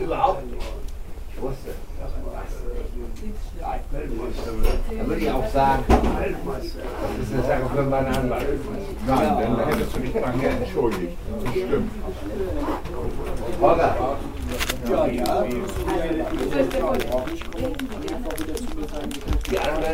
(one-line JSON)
{"title": "Sailors Inn", "date": "2009-10-31 17:00:00", "description": "Aus der Serie \"Immobilien & Verbrechen\". Schnaps, Gespräche und Post vom Investor.\nKeywords: Gentrifizierung, St. Pauli, Köhler & von Bargen, NoBNQ - Kein Bernhard Nocht Quartier", "latitude": "53.55", "longitude": "9.96", "altitude": "17", "timezone": "Europe/Berlin"}